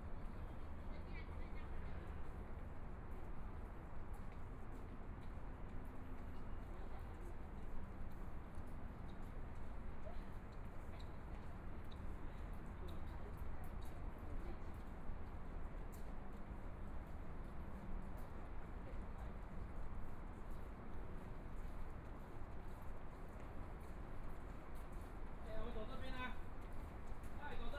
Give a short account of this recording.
Walking through the park, Environmental sounds, Traffic Sound, Tourist, Clammy cloudy, Binaural recordings, Zoom H4n+ Soundman OKM II